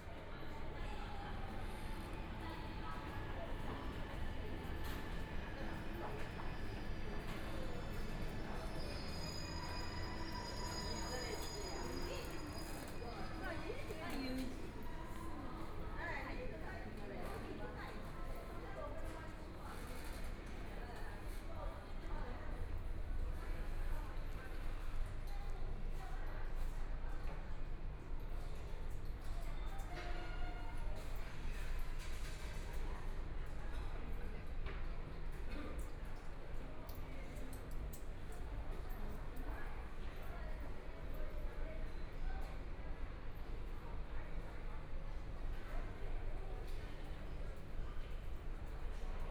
Dongchang Road station, Shanghai - Walking in the subway station
Walking in the subway station, Subway station broadcast messages, Walking in underground passage, Binaural recording, Zoom H6+ Soundman OKM II